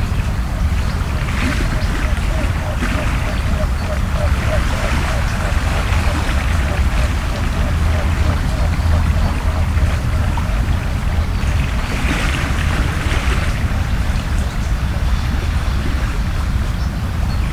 Niévroz, Rhône river
Near the Rhône river, heavy torrent.